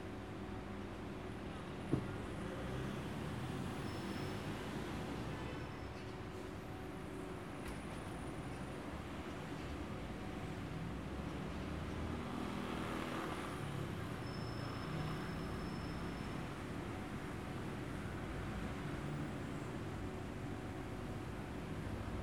Anthipolochagou Tassopoulou, Ag. Paraskevi, Greece - ano plagia in the morning
recorded with zoom h4n